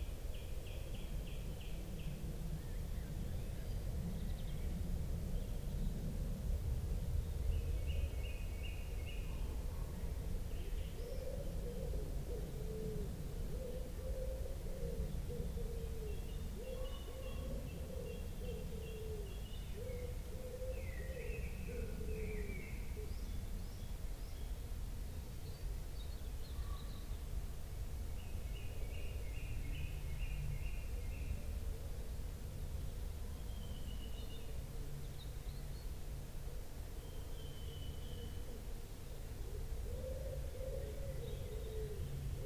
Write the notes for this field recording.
Listening for 48 minutes in the forest exactly 10 miles due North of my house, for a friend's project, writing about what I heard as I sat there. The long rhythm of planes passing, the bustle of pheasants, the density of the air on a damp spring day with sunlight in the woods.